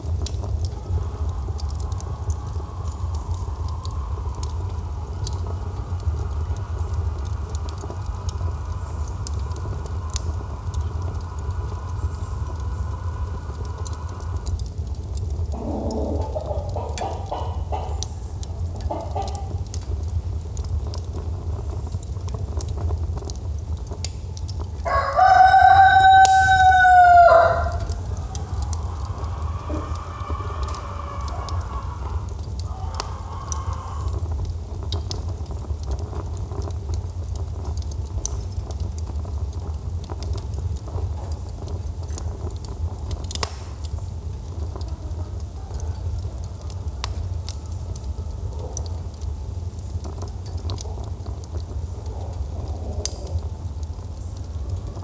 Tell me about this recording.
Recorded with a Sound Devices MixPre-3 & a pair of DPA 4060s